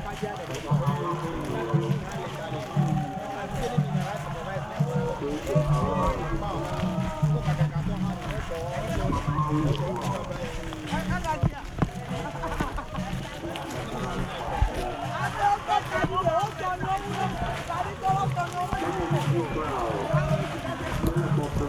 Togbe Tawiah St, Ho, Ghana - church of ARS service
church of ARS (Apolistic Revelation Society)'s service is a Ghanean church with christan and african roots. Their profet is called CHARLES KWABLA NUTORNUTI WOVENU He was a concious objector to the British army. October 31st 1939 the holy ghost came down omn him and he started to sing and preach. We were picked up at main street with a procession with people dressed in white, some holding candles and drumsothers playing drums. some pictures you can see @ my blogspot Lola Vandaag (Lola Radio)